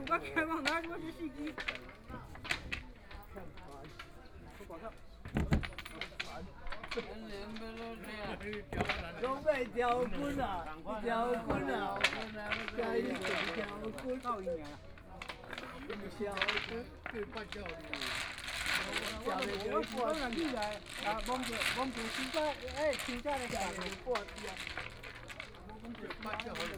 Liyu (Carp) Mountain Park - in the Park
Dialogue among the elderly, Singing sound, Old man playing chess, Binaural recordings, Zoom H4n+ Soundman OKM II ( SoundMap2014016 -5)
Taitung County, Taiwan, 16 January 2014, 10:46